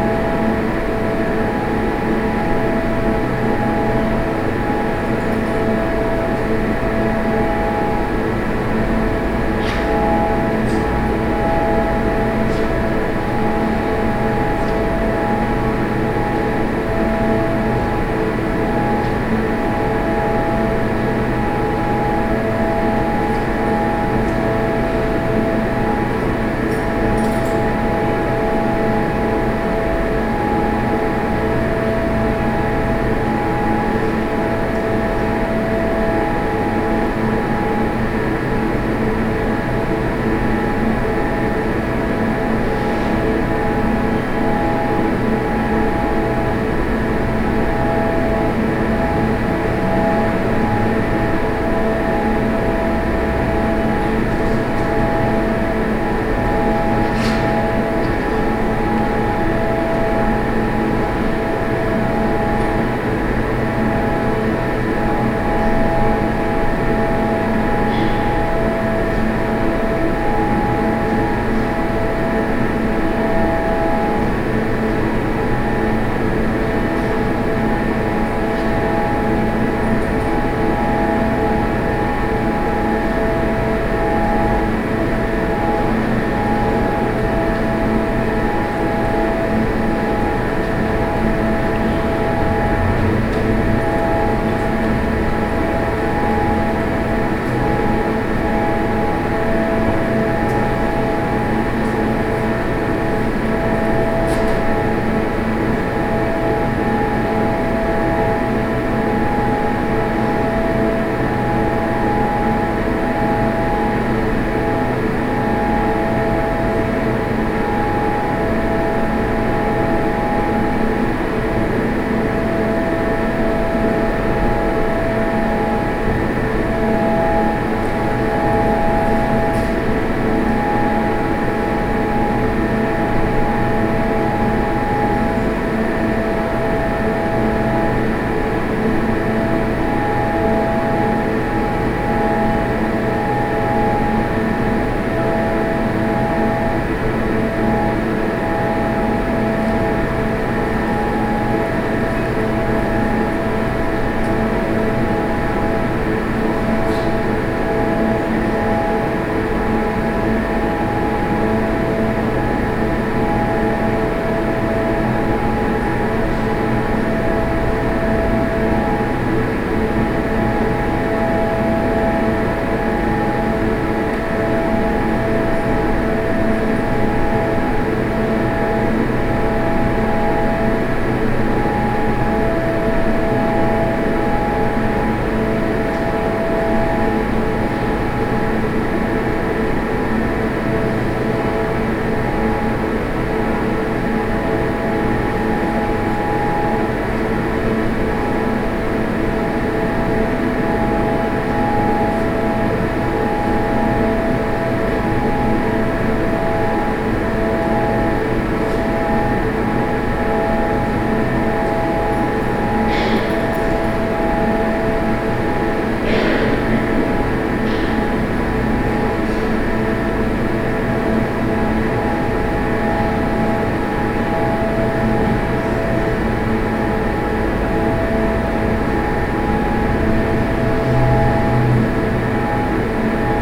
Oslo, St Olavsgate, KUNSTINDUSTRIMUSEET
Norway, Oslo, museum, air conditioning, binaural